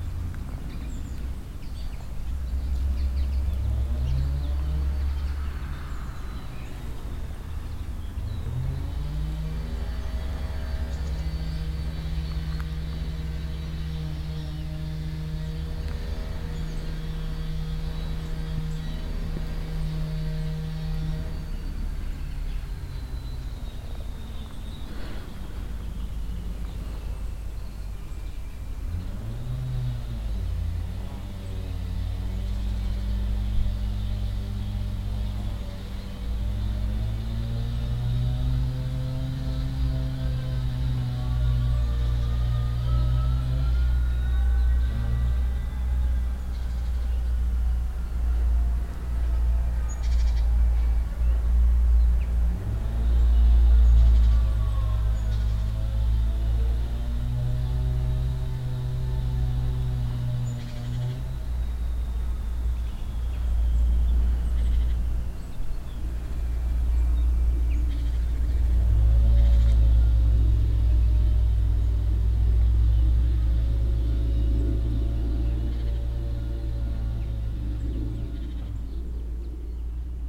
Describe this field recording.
In between trees and bushes near to a field. The sound of several birds and the tree leaves in the mild late summer wind. A bee flying close to the microphones and from the distance the sound of cars and other motor engines at work. Walsdorf, Vögel, Bienen und Motoren, Zwischen Bäumen und Büschen neben einem Feld. Das Geräusch von mehreren Vögeln und der Baumblätter im milden Spätsommerwind. Eine Biene fliegt nahe an der Mikrophon heran und aus der Ferne das Geräusch von Autos und anderen Motoren bei der Arbeit. Walsdorf, abeilles et moteurs, Entre des arbres et des buissons, à proximité d’un champ. Le bruit de plusieurs oiseaux et des feuilles des arbres balancées par le doux vent de la fin de l’été. Une abeille volant près du microphone et, dans le lointain, le bruit de voitures et d’autres moteurs en action.